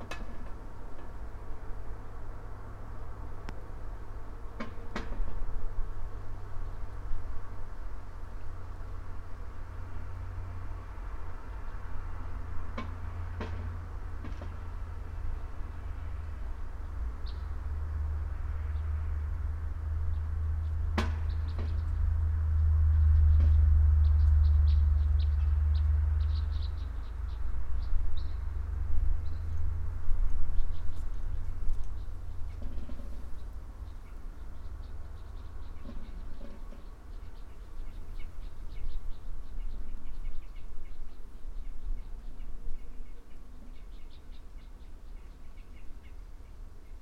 quarry, Marušići, Croatia - void voices - stony chambers of exploitation - reflector
few meters below reflector, winter
28 December 2012, ~14:00